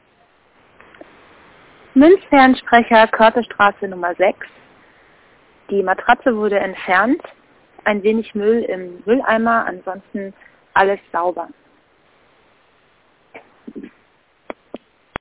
Fernsprecher Körtestraße 6 - Matraze entfernt 03.08.2007 10:57:48
Berlin